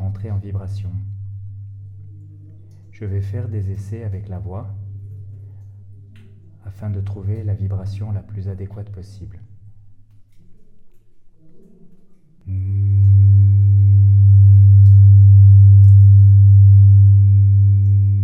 Rimogne, France - Singing underground mine
Into the underground slate quarry, I found a reverberation tunnel. It's always the same : small tunnel, smooth walls, everything straight ahead, a ceiling diminishing very slowly. Only one place works and considering that the tunnel is big or small, only one note works. In order to show aporee audience how sounds reverberate in a slate quarry, I sing a few notes. Unederground mines are so funny !
February 2018